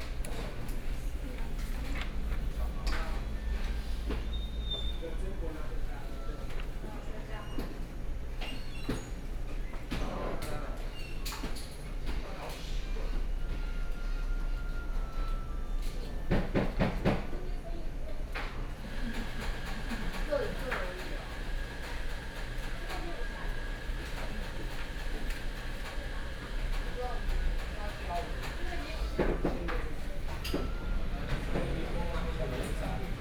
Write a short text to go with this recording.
In the coffee shop, Sony PCM D50 + Soundman OKM II